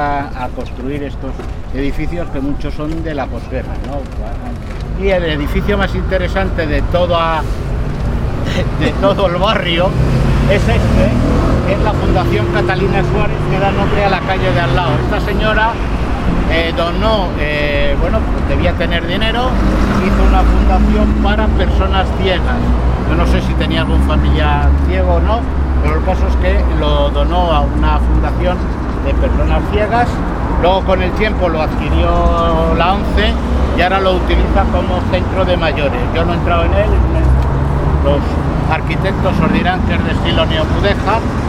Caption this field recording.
Pacífico Puente Abierto - Transecto - Fundación Catalina Suárez